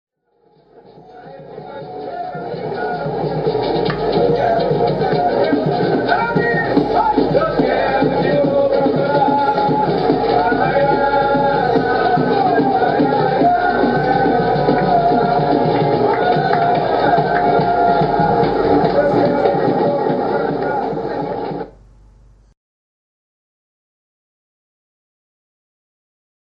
Corcovado - On the train to Corcovado